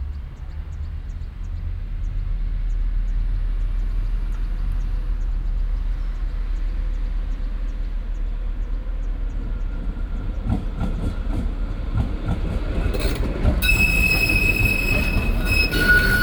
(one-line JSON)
{"title": "cologne, ubierring, ampel + strassenbahn - koeln, sued, ubierring, strassenbahnen", "description": "zwei strassenbahnen, morgens\nsoundmap nrw:", "latitude": "50.92", "longitude": "6.97", "altitude": "52", "timezone": "GMT+1"}